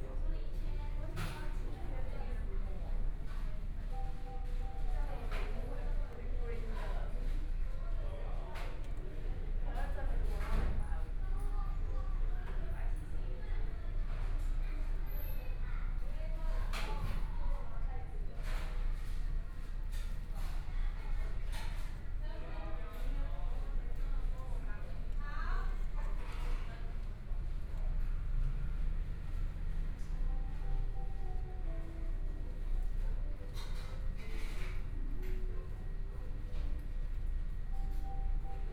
台東市豐榮里 - fast food restaurant
in the McDonald's fast food restaurant, Binaural recordings, Zoom H4n+ Soundman OKM II ( SoundMap20140117- 6)
Taitung City, Taitung County, Taiwan